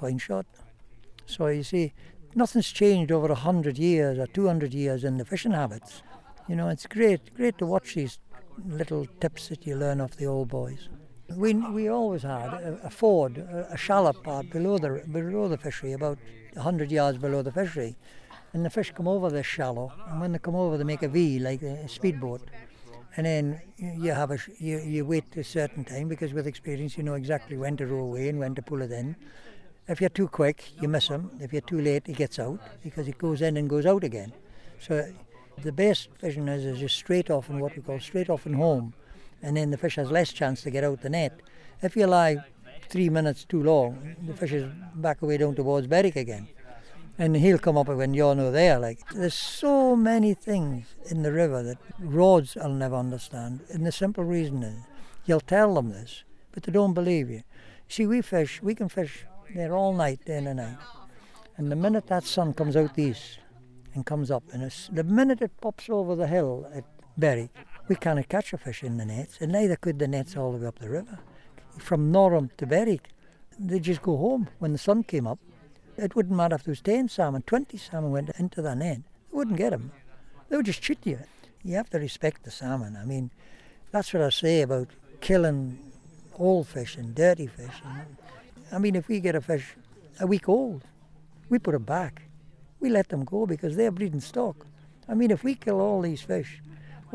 Paxton, Scottish Borders, UK - River Voices - George Purvis, Paxton
Field interview with George Purvis, skipper at Paxton netting station, one of the last two netting stations on the River Tweed in the Scottish Borders.
George talks about reading the river, the fish and the wind, and his many years' experience of net fishing.
5 September 2013